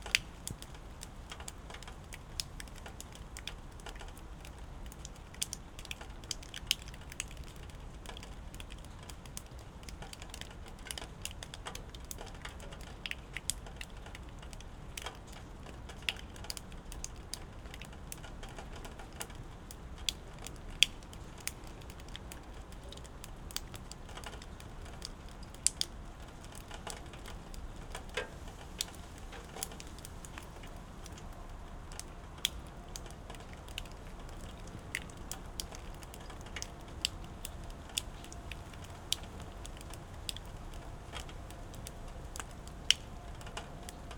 Immerath, Erkelenz, Germany - Immerath, church, raindrops
Immerath church, rain drops, silent village
1 November, 13:40